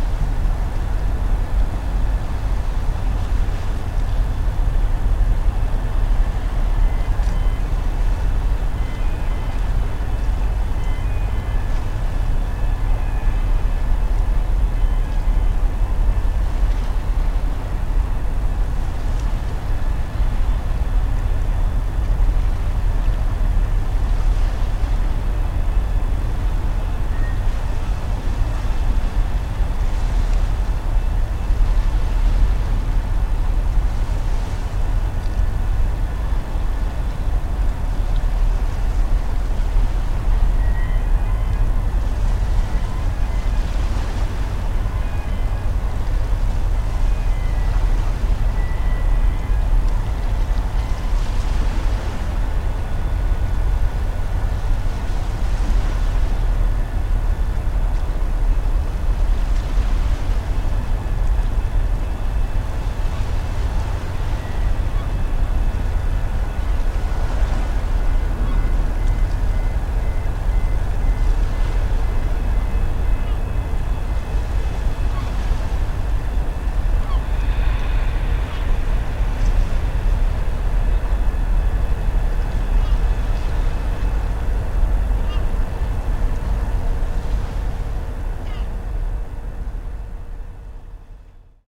Dunkerque Port Ouest - DK Port Ouest
Dunkerque Port Ouest. Docks, unloading cargo ships. Zoom H2.
15 April 2009, 23:08